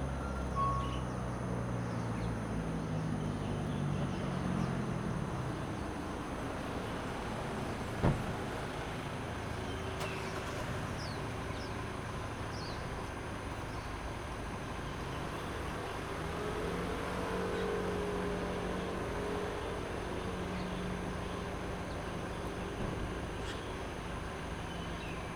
in the parking lot, traffic sound, early morning, birds chirping
Zoom H2n MS+XY